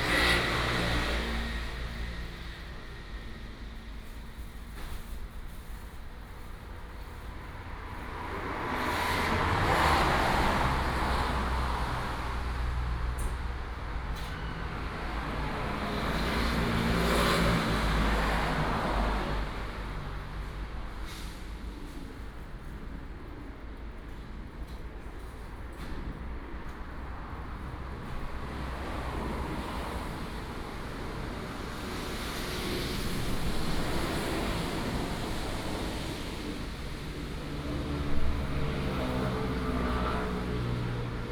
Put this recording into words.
Traffic Sound, In front of the convenience store